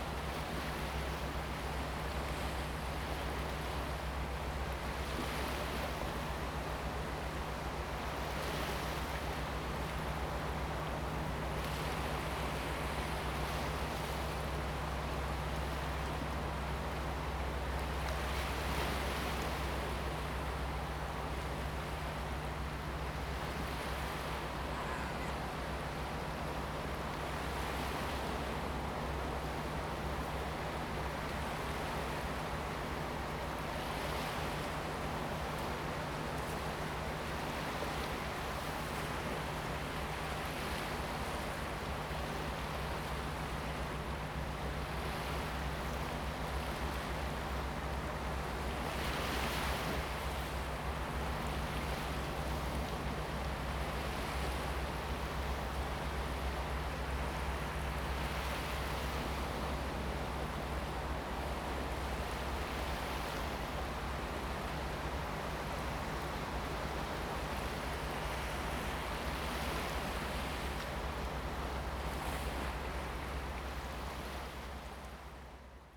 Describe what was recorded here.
Sound of the waves, At the beach, Zoom H2n MS+XY